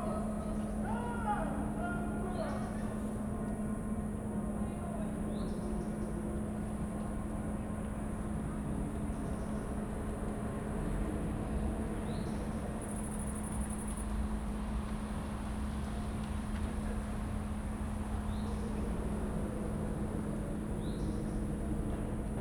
Maribor, Mestni park - multifaceted evening soundscape
amazing soundscape at Mestni park: crickets, people, distant soccer match, church bells, the hypnotic drones from the mill near Maribor station
(SD702 Audio Technica BP4025)
Maribor, Slovenia, 1 August 2012